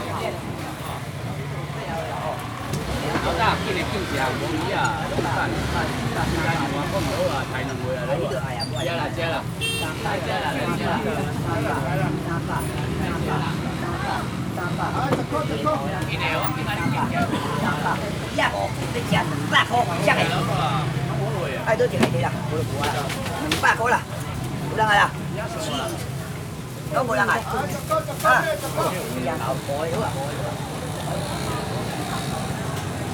福和橋市場, Yonghe Dist., New Taipei City - the traditional market
Walking in the traditional market, Traffic Sound
Zoom H4n
Yonghe District, 福和橋機車專用道, 21 May 2011, ~11:00